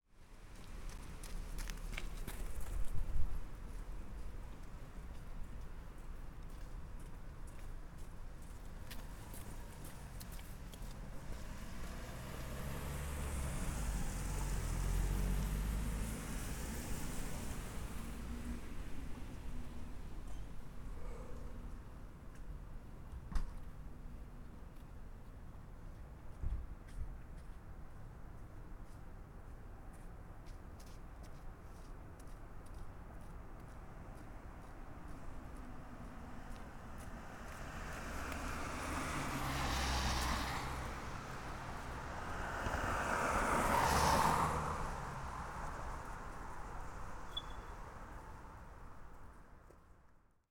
Montreal: Duluth and St. Urbain - Duluth and St. Urbain
Standing on the corner